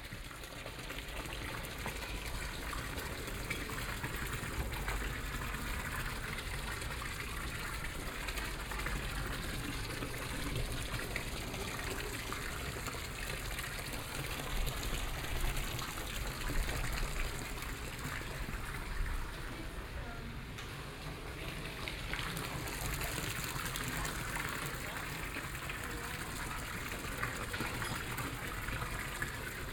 Aarau, Kirchplatz, Fountain, Bells, Schweiz - walk around the well
Walk around the well, the bells are tolling, noon at Kirchplatz, people are starting to have lunch.